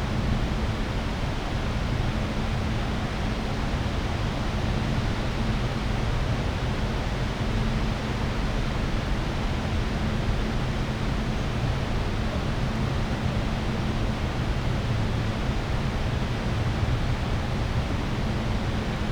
burg/wupper: waldweg - the city, the country & me: forest track
weir of sewage treatment plant, airplane passing
the city, the country & me: april 26, 2013
Solingen, Germany